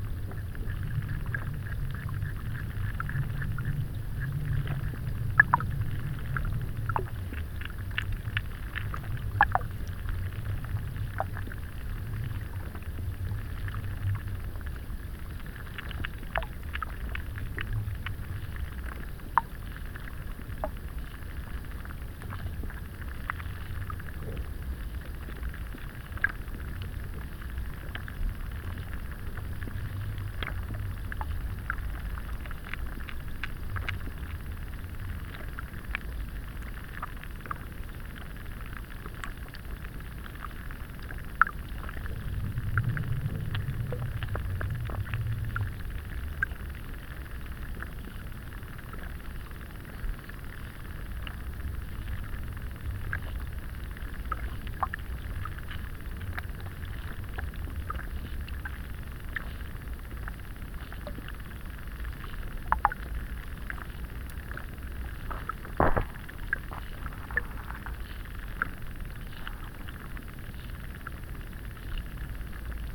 Kaliningrad, Russia, underwater
another point od underwater sound exploration
Kaliningrad, Kaliningradskaya oblast, Russia, 7 June 2019, ~11:00